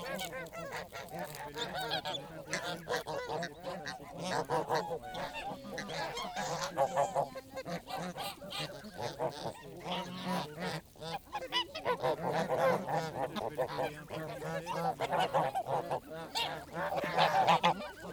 Ottignies-Louvain-la-Neuve, Belgique - Starved geese
Because of heat wave, geese are famished. Birds are herbivorous, the grass is completely yellow and burned. A lady is giving grass pellets. It makes birds becoming completely crazy. I specify that a bird is taking a dump on a microphone on 2:50 mn !